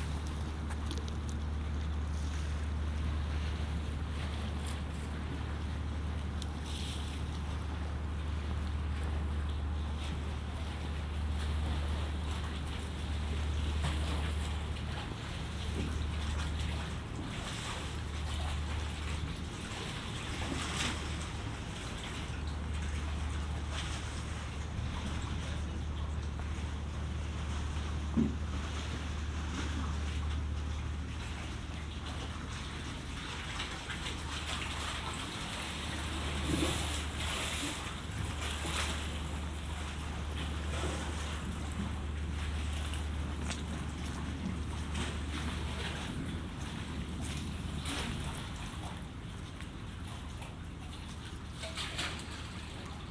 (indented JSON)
{
  "title": "Schiemond, Rotterdam, Nederland - Tussen wal en schip",
  "date": "2016-04-13 12:20:00",
  "description": "Water between the quay and a cargo ship.",
  "latitude": "51.90",
  "longitude": "4.46",
  "timezone": "Europe/Amsterdam"
}